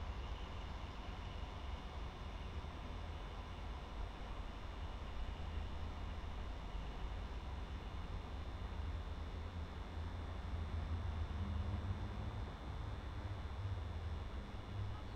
Rijeka, Croatia, Railway Station - Maneuver Locomotive
23 July 2008